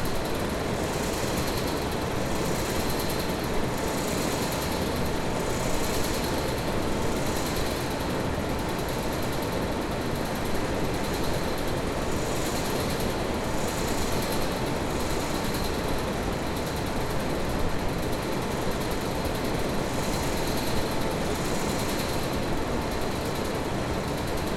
Frankfurt Airport (FRA), Frankfurt am Main, Deutschland - Escelator noises
Since the airport is quite empty during the Corona times, the sound of the escalators became more audible -